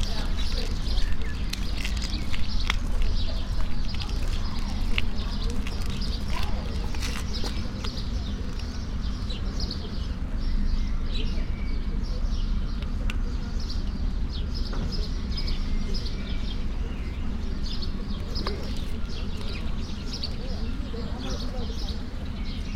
Alt-Treptow, Berlin, Germany - walk, umbrella
park and working halls sonicscape, birds, bicycles, people small talks, sandy path
Deutschland, European Union, 26 May 2013